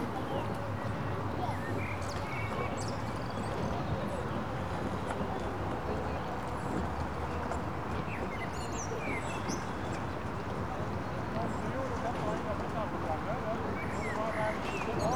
Nürtingen, Deutschland - rowing strokes
The local rowing club (RCN) is located on the other side of the river 'Neckar'.
Equipment: Sony PCM-D50